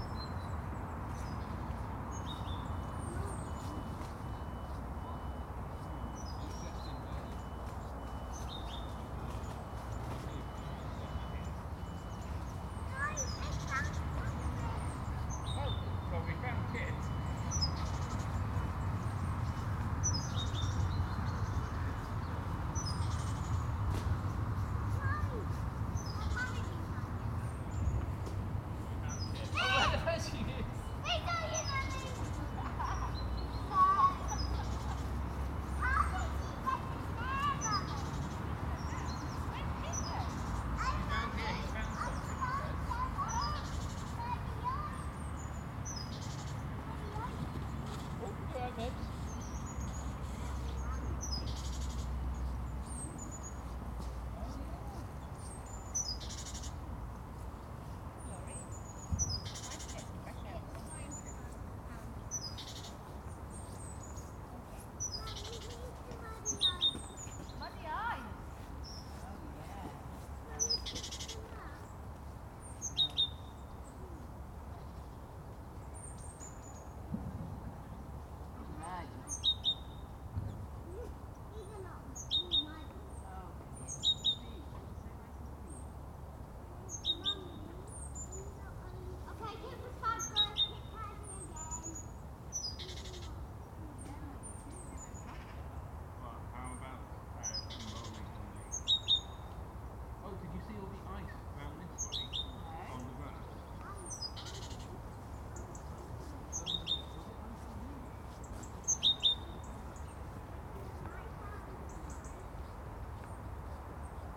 The Poplars Roseworth Avenue The Grove
A family play hide and seek
of a sort
the three children dressed in ski suits
Birdsong comes and goes
but I see few birds
The low winter sun is lighting up the grass
a carpet of frost-droplet sparkles